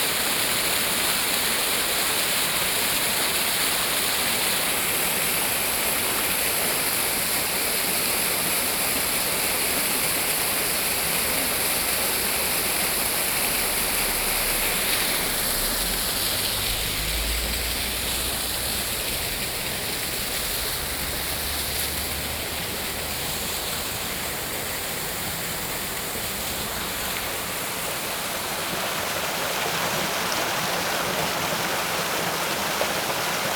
Sec., Balian Rd., Xizhi Dist., New Taipei City - sound of water streams
sound of water streams, Binaural recordings, Sony PCM D50